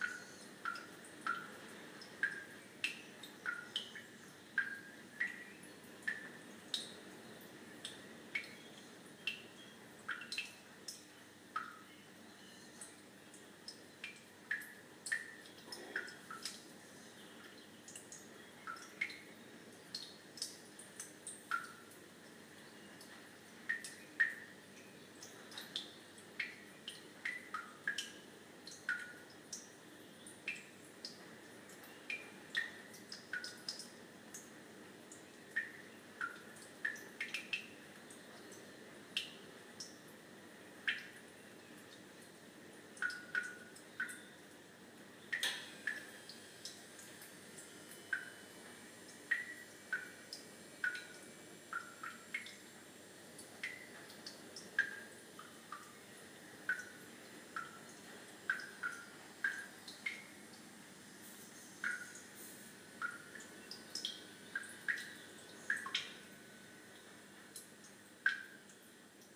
Inverewe Gardens, Poolewe, Achnasheen, UK - Another tuneful urinalysis
We took our tiny Eriba on the North Coast (of Scotland) 500 and holed up here for the night. It was raining really hard but I braved the wet to go for my late night pee in the campsite loos. It was deserted and as I stood there I was entertained by the tune being played in the very slow filling cistern: drip, driplet, drippity, drip, drip. It was great and a big contrast to the wild lashing rain outside. I used my iPhone 5 to record this mono track
5 May 2016